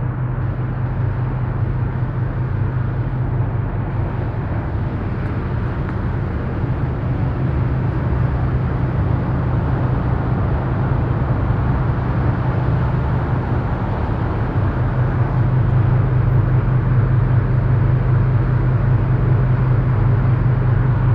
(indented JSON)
{
  "title": "Skudeneshavn, Norwegen - Skudeneshavn, seagulls rock",
  "date": "2012-07-28 17:10:00",
  "description": "In the harbour of Skudeneshavn at a rock full with breeding seagulls on a windy summer day. The permanent sound of a ship motor nearby.\ninternational sound scapes - topographic field recordings and social ambiences",
  "latitude": "59.15",
  "longitude": "5.27",
  "altitude": "7",
  "timezone": "Europe/Oslo"
}